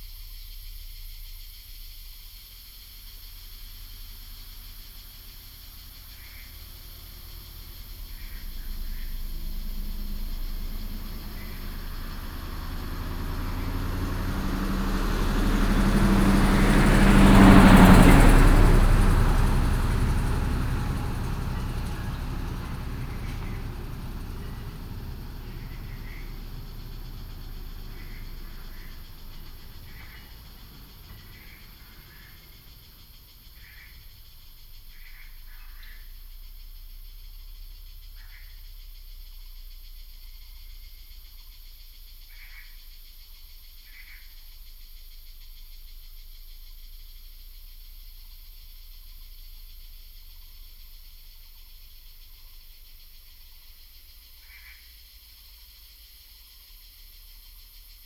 Birds singing, Cicadas cry, in the woods
Yuchi Township, 華龍巷43號, 26 April 2016